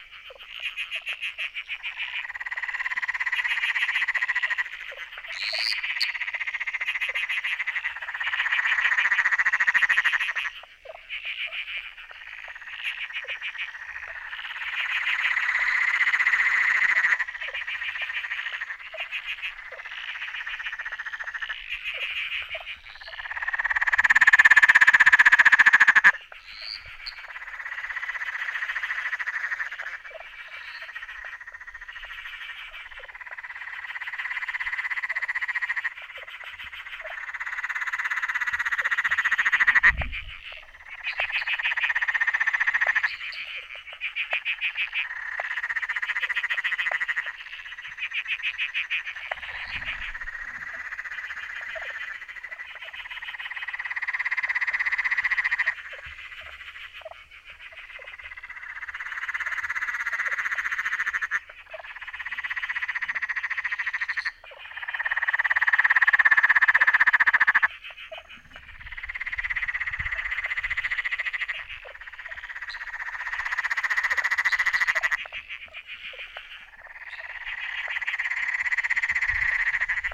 Enoggera Reservoir QLD, Australia - Amphibious Opera
Various frogs calling in the evening.